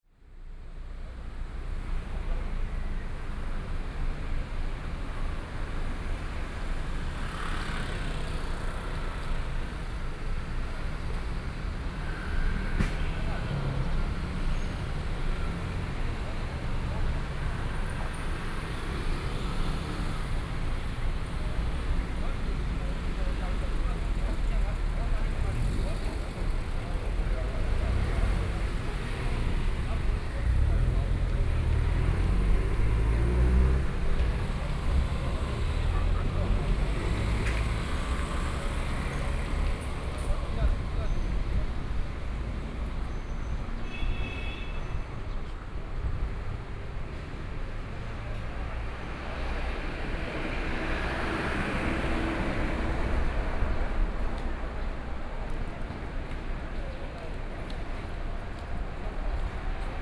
東勢街, 基隆市暖暖區 - Bus station
Bus stationStanding on the roadside, Traffic Sound, Very hot weather
Sony PCM D50+ Soundman OKM II